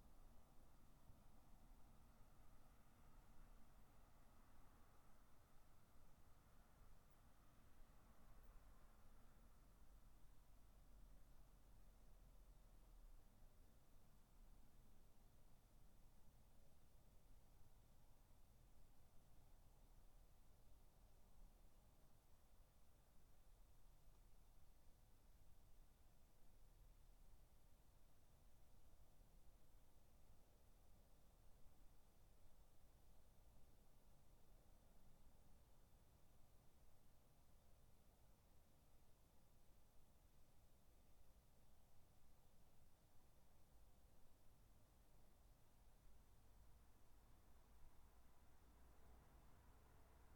Dorridge, West Midlands, UK - Garden 21
3 minute recording of my back garden recorded on a Yamaha Pocketrak